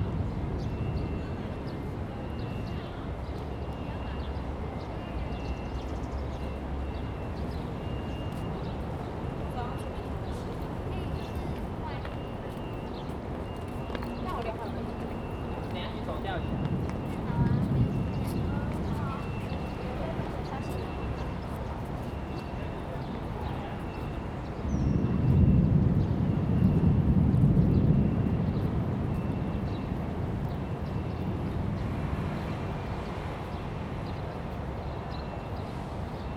碧潭, Xindian Dist., New Taipei City - Thunder and birds
Sitting on the embankment side, Viaduct below, Thunder
Zoom H2n MS+ XY
28 July, ~15:00